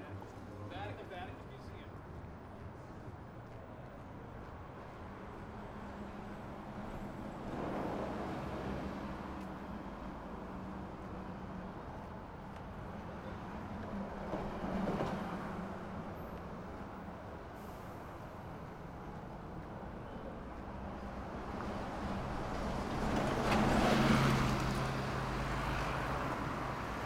Rijeka, Croatia - Intro Outro 2017 Walking
Walking before...2017